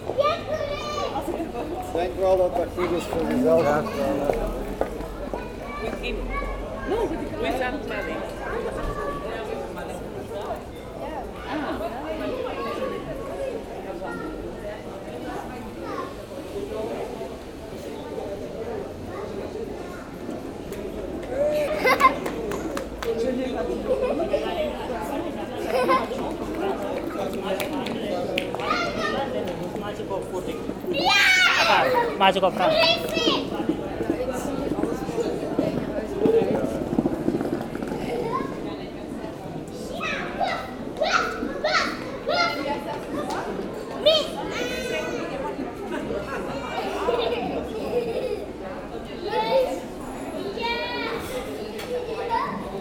Leuven, Belgique - Commercial artery
People discussing on the main commercial artery, children running and screaming.
Leuven, Belgium, 13 October 2018